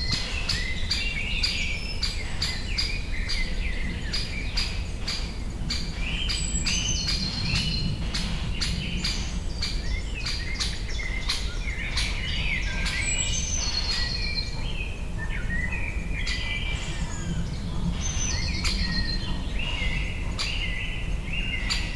Gogulec nature reserve, Zlotkowo - spring forest ambience
recorded in the heart of a Gogulec nature reserve, north from Poznan on a rainy afternoon. The place is very overgrown with dense vegetation. Bird activity increasing after short rain. inevitable plane rumble. (roland r-07)